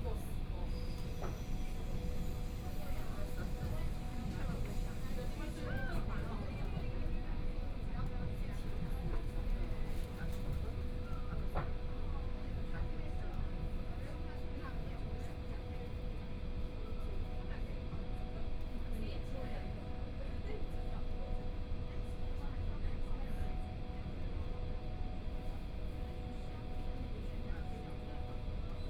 Changning District, Shanghai - Line 4 (Shanghai Metro)
from Zhongshan Park Station to Yishan Road Station, Binaural recording, Zoom H6+ Soundman OKM II